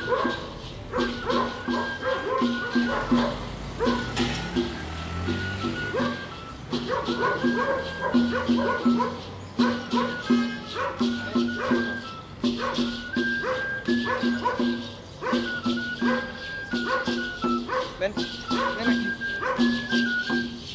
Escandón, Ciudad de México, D.F., Mexico - El barrio
Just around the corner! Mexico City!